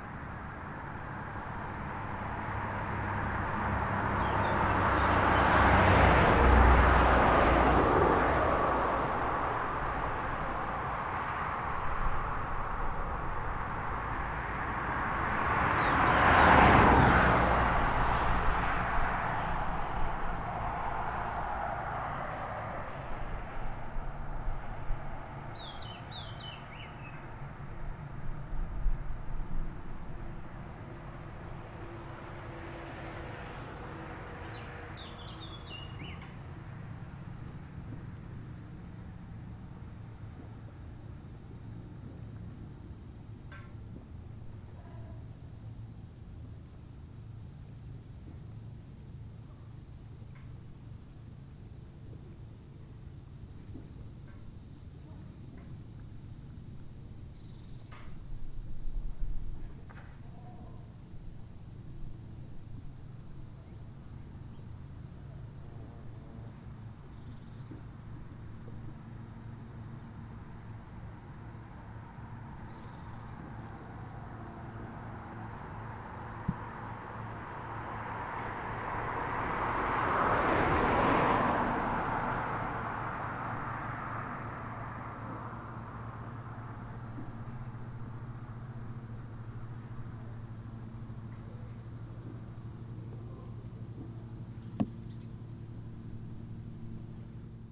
Au bord de la RD 991 près du lac du Bourget côté falaise, avec la chaleur les cigales sont très actives, passage de véhicules sur la route toute neuve .